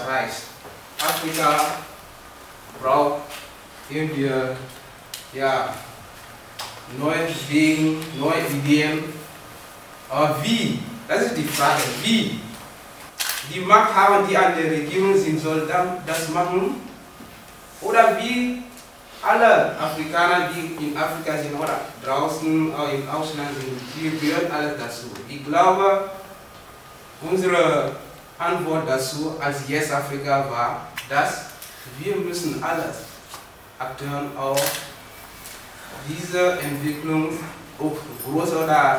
VHS, Hamm, Germany - Nelli's welcome speech...
These recordings were made during the "Empowerment Day" of Yes-Afrika e.V. in Hamm, Germany.